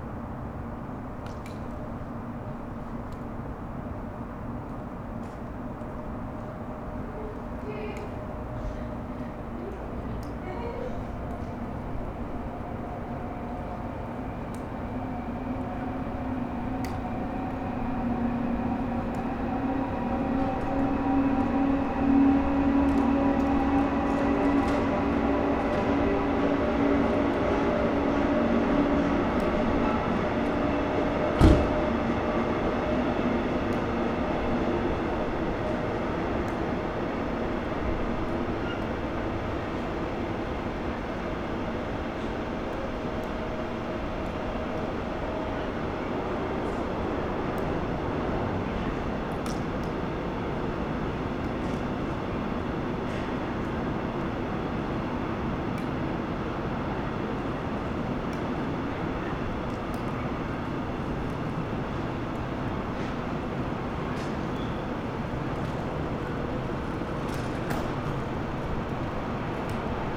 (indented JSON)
{
  "title": "Neustadt-Nord, Cologne, Germany - parking area near railroad",
  "date": "2012-12-04 22:30:00",
  "description": "parking in front of dance center, people leaving the building, drops, trains, early winter night ambience\n(Sony PCM D50)",
  "latitude": "50.94",
  "longitude": "6.93",
  "altitude": "54",
  "timezone": "Europe/Berlin"
}